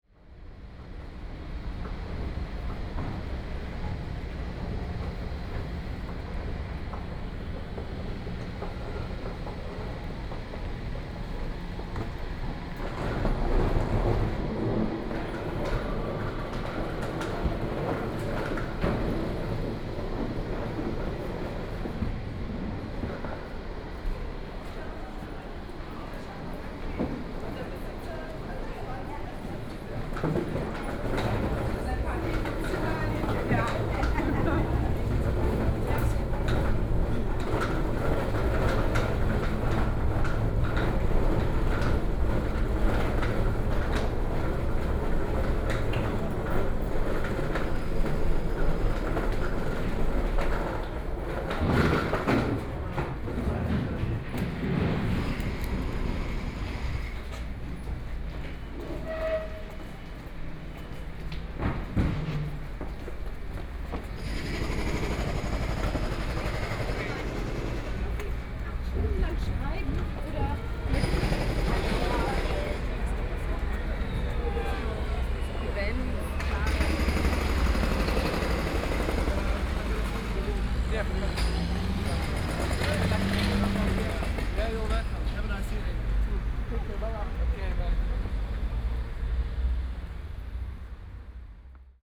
Maxvorstadt, Munich - in the Corner
walking out of the subway station, Sound from road construction